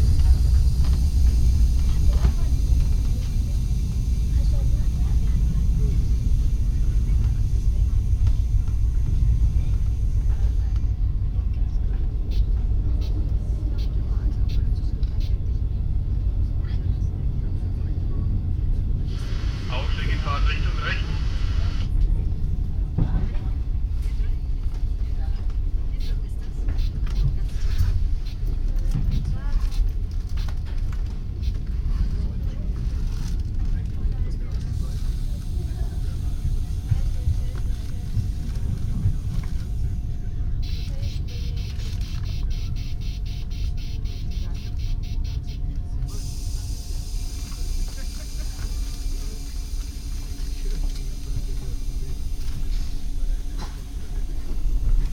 inside interregio train, next stop unna, afternoon, fahrgeräusche, stimmen der reisenden, bahnhofsansage des zugbegleiters
soundmap nrw:
social ambiences, topographic field recordings
12 August 2008, ~13:00